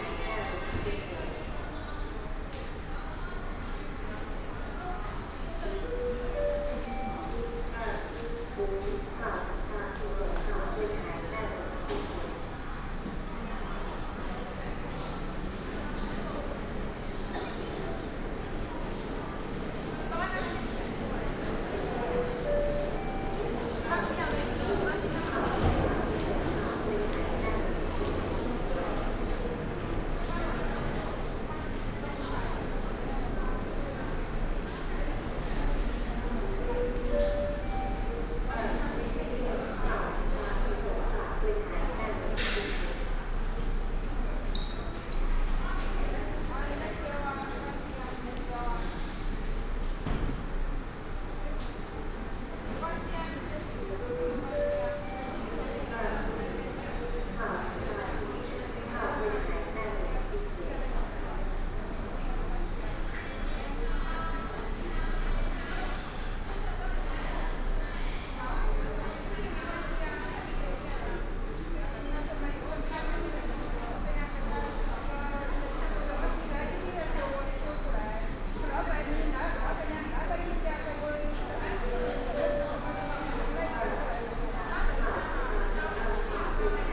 臺北市立聯合醫院仁愛院區, Da’an Dist., Taipei City - In hospital
In hospital, Front payment counter
Taipei City, Taiwan, 24 July 2015